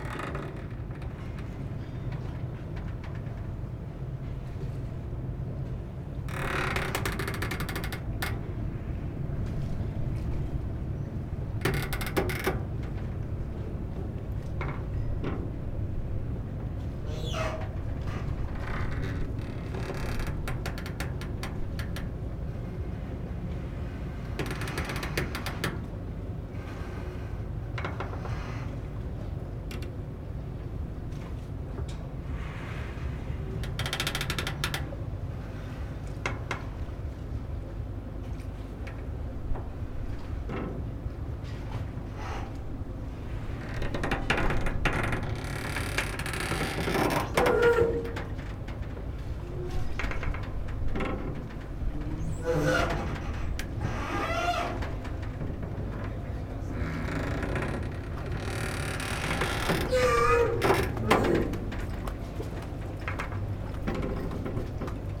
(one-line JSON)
{"title": "Almada, Portugal - Singing Metal bridge", "date": "2015-06-28 19:39:00", "description": "Metal bridge noises, scrapping, tension of metal from the water and nearby platform movements. Recorded in MS stereo with a Shure VP88 into a Tascam dr-70d.", "latitude": "38.69", "longitude": "-9.15", "altitude": "1", "timezone": "Europe/Lisbon"}